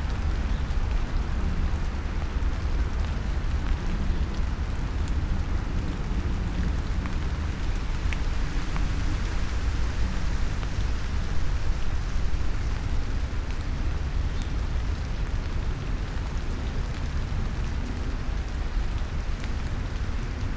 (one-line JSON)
{"title": "tree, islands tail, Mitte, Berlin, Germany - rain", "date": "2015-09-06 14:03:00", "description": "Sonopoetic paths Berlin", "latitude": "52.51", "longitude": "13.41", "altitude": "32", "timezone": "Europe/Berlin"}